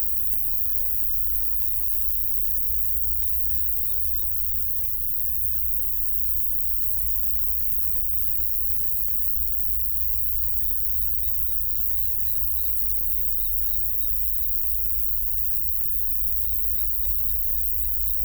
Tempelhofer Feld, Berlin, Deutschland - intense crickets in high grass
hot summer morning at former Tempelhof airfield, intense cricket sounds in the high grass, microphone close to the ground. deep drones are audible too.
(Sony PCM D50, DPA4060)